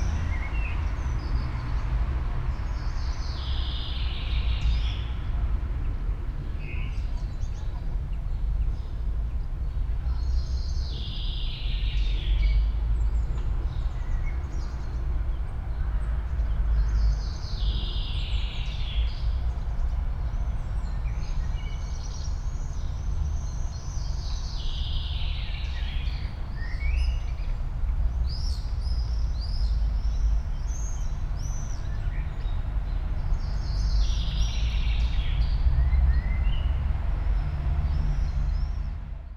all the mornings of the ... - jul 8 2013 monday 07:03
Maribor, Slovenia, July 2013